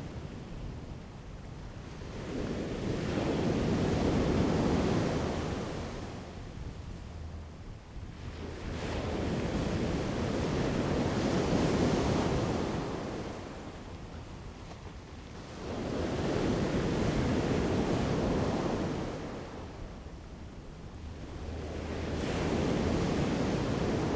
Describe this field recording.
Sound of the waves, Aircraft flying through, The weather is very hot, Zoom H2n MS+XY